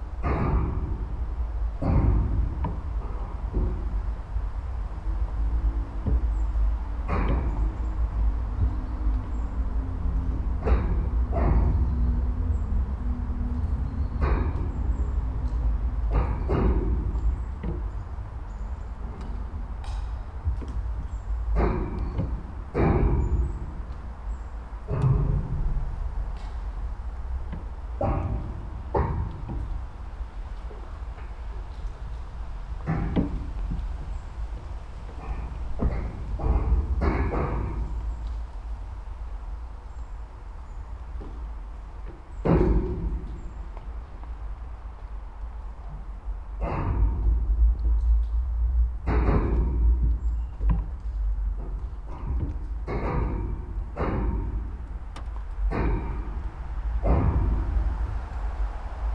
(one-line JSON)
{"title": "Vilnius, Lithuania, abandoned factory", "date": "2018-09-27 12:50:00", "description": "4 tracks at the abandoned factory: contact mics and omni", "latitude": "54.71", "longitude": "25.27", "altitude": "116", "timezone": "GMT+1"}